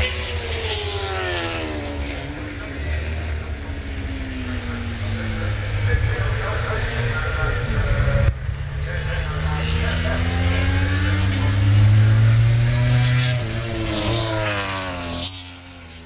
Lillingstone Dayrell with Luffield Abbey, UK - british motorcycle grand prix 2013 ...
motogp fp2 2013 ...
Towcester, UK